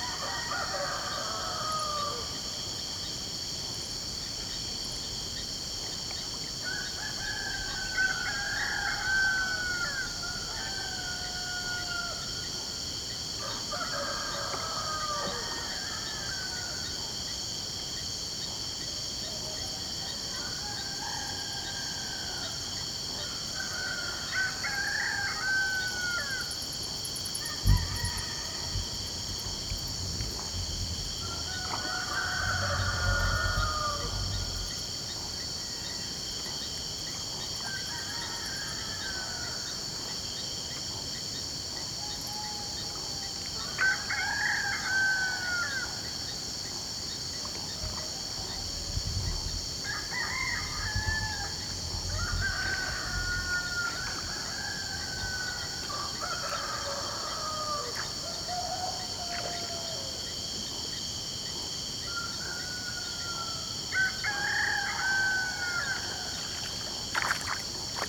Tauary, Amazonas - Dawn in a small village, in the Amazonian Rainforest
Close to river in the small village of Tauary, the night is finishing and the day is starting... some rooster singing far away, crickets and light water movements (done by fishes).
Microphones ORTF Setup 2x Schoeps CCM4
Recorder Sound Devices 633
Sound Ref: BR-170915T19
GPS: -3.635208936293779, -64.9607665995801
15 September 2017, ~07:00